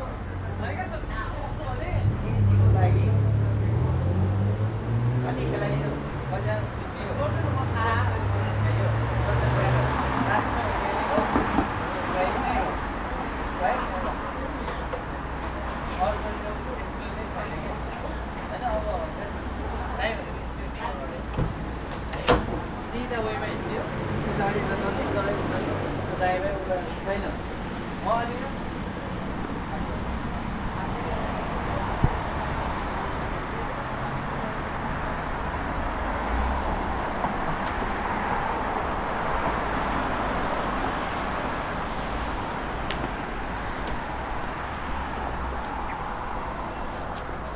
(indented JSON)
{
  "title": "Raglan St, Waterloo NSW, Australia - The Corner",
  "date": "2020-07-10 22:20:00",
  "description": "Lively corner of Raglan and Cope St, recorded with Zoom pro mic",
  "latitude": "-33.90",
  "longitude": "151.20",
  "altitude": "25",
  "timezone": "Australia/Sydney"
}